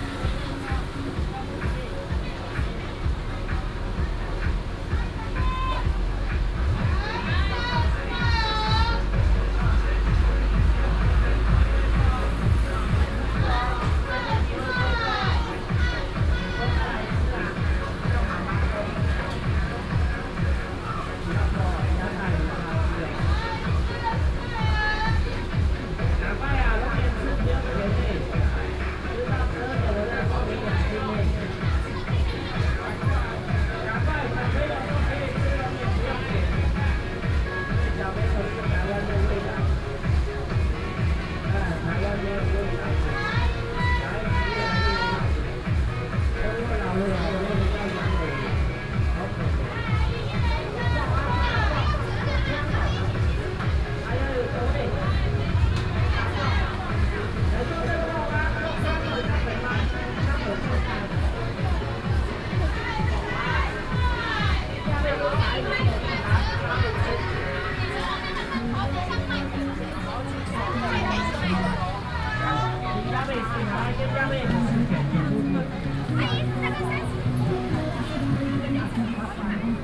vendors peddling, Traditional Markets, Binaural recordings, Sony PCM D100+ Soundman OKM II